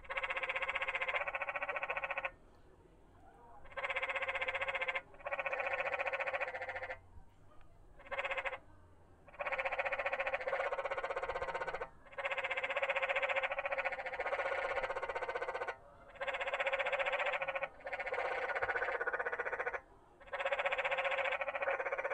Arcosanti, AZ - Arcosanti's Frogs
This recording was made at Arcosanti, a project by Italian architect Paolo Soleri.
The frogs were inside a cement structure that I initially mistook for a trash can. Later I came to realize that the structure was housing the frogs and was itself an angular futurist rendition of a frog.
From Wikipedia: Arcosanti is an experimental town and molten bronze bell casting community in Yavapai County, central Arizona, 70 mi north of Phoenix, at an elevation of 3,732 feet.